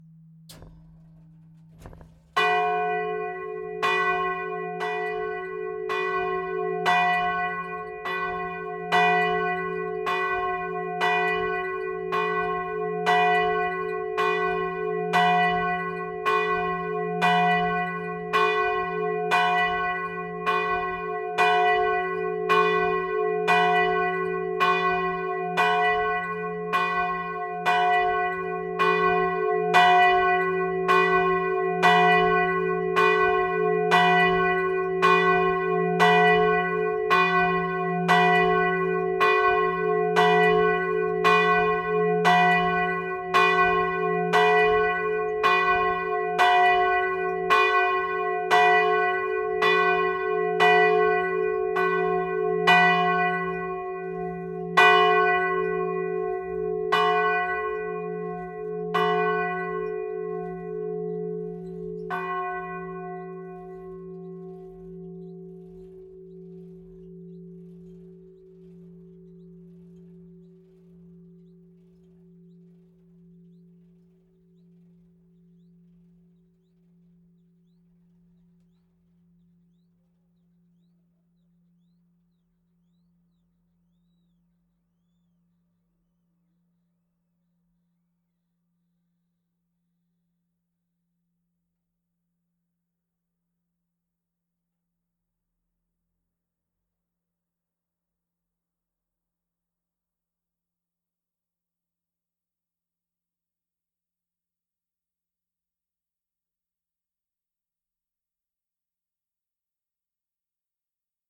Rue du 13 Août, Tourouvre au Perche, France - Tourouvre au Perche - Église St-Aubin
Tourouvre au Perche (Orne)
Église St-Aubin
Volée cloche 3